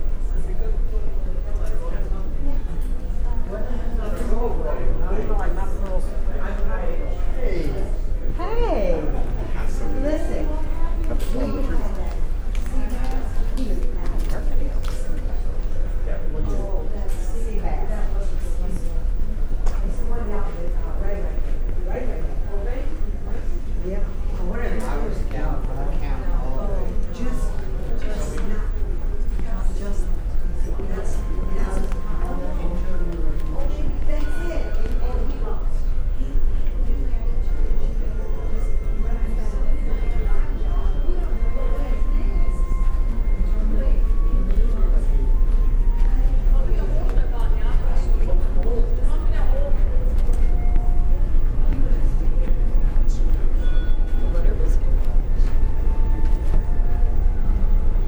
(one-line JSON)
{"title": "Walkabout on the, North Atlantic Ocean. - Walkabout", "date": "2019-04-19 13:27:00", "description": "Recorded on a trans atlantic crossing Southampton-New York while walking from deck 7, the Kings Court self service dining area along to The Corinthian Room, down to the Main Concourse on deck 3 and finally deck 2 outside the computer area. I found walking without making creaking sounds impossible. The double chimes are the lifts. Heard are voices at a quiz in the Golden Lion Pub and part of a recital in The Royal Court Theatre. The final voices are teenagers outside Connexions, a public meeting area with computers.\nMixPre 3 with 2 x Beyer Lavaliers.", "latitude": "49.01", "longitude": "-16.12", "timezone": "Europe/Dublin"}